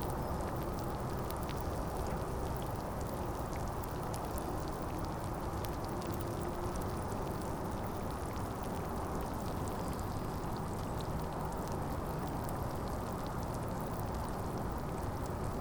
Criquebeuf-sur-Seine, France - High tide
The high tide on the Seine river is called Mascaret. It arrives on the river like a big wave. On the mascaret, every beach reacts differently. Here the sand and gravels make a lot of small bubbles.
19 September 2016, 16:00